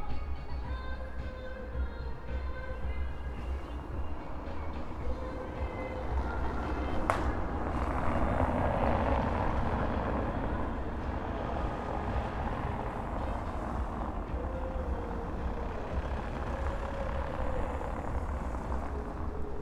Lithuania, Zarasai, evening city's ambience
windy evening, street traffic, some concert at the christmas tree in the distance
15 December 2012, ~17:00